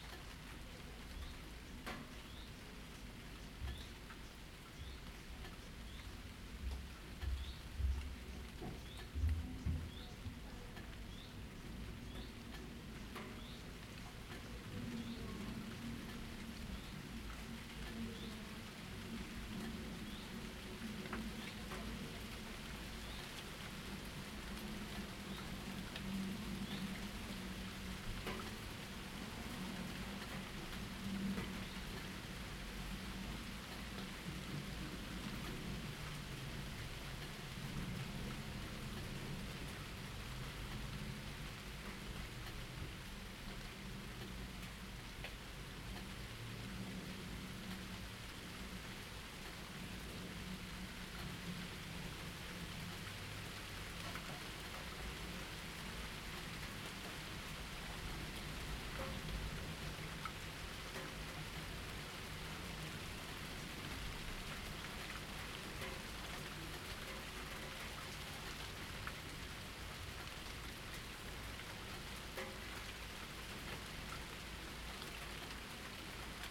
Light rain outside, water drips, dishes clack in the kitchen. Rain intensifies and clears again. Bird chirps.
Zoom H2n, 2CH, set on a shelf near open balcony door.
Hlavní město Praha, Praha, Česká republika